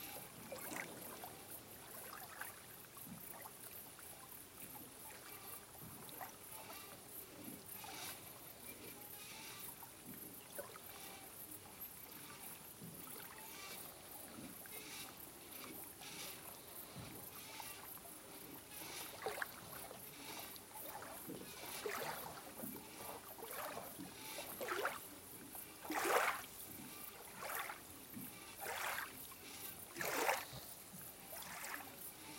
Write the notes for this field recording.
nice quiet recording, small boats rubbing against the pier - "melodies" resulting. stafsäter recordings. recorded july, 2008.